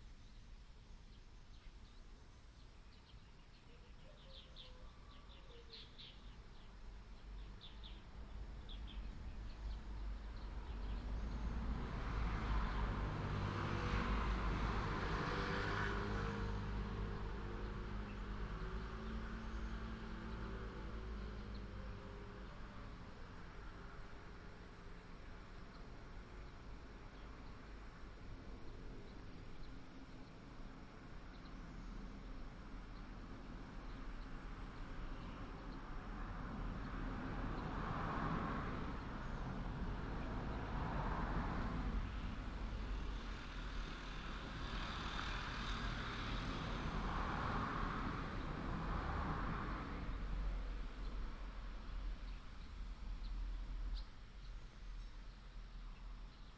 北部橫貫公路40號, Fuxing Dist., Taoyuan City - In the small temple
In the small temple, sound of birds
Traffic sound, Chicken cry
Zoom H2nMS+XY